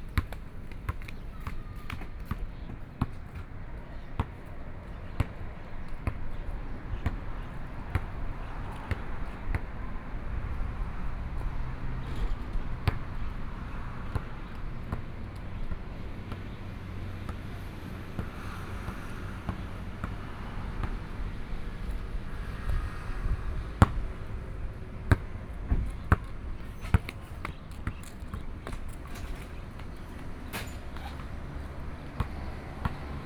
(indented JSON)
{
  "title": "富世村, Sioulin Township - Playing basketball",
  "date": "2014-08-27 13:20:00",
  "description": "Birdsong, Playing basketball, The weather is very hot, Traffic Sound, Aboriginal tribes\nBinaural recordings",
  "latitude": "24.15",
  "longitude": "121.63",
  "altitude": "60",
  "timezone": "Asia/Taipei"
}